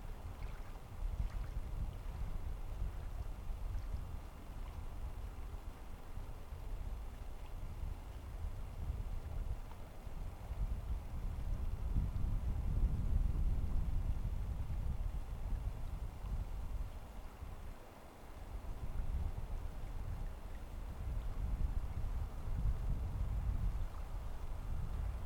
Three Pines Rd., Bear Lake, MI, USA - November Breeze and Ducks
Breezy evening, just as wind is starting to kick up for the night. Geese very high overhead, and ducks some distance out from the north shore. As heard from the top of steps leading down to water's edge. Stereo mic (Audio-Technica, AT-822), recorded via Sony MD (MZ-NF810).